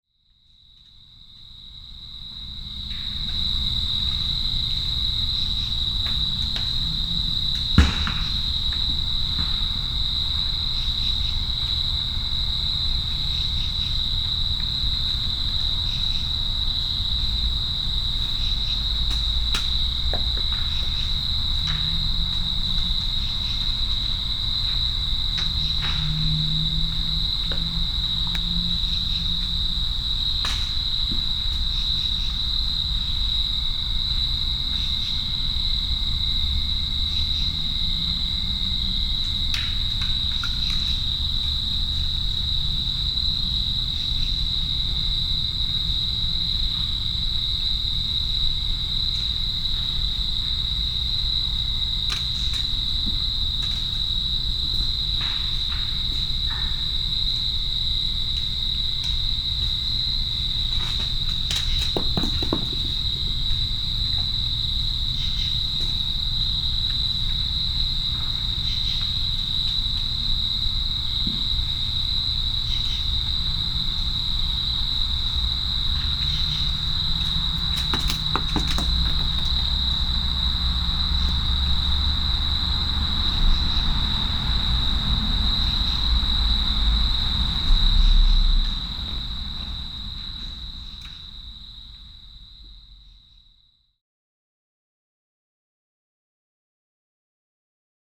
Coram, NY, USA - Acorns falling during a "mast year"
Recorded with MM Audio BSM-9 omnidirectional lavs, this captures the sounds of acorns falling from Large Oak trees during a record year for volume. They can be heard thudding the ground and bouncing off of various surfaces in this suburban neighborhood on a quiet, still night.
20 October 2016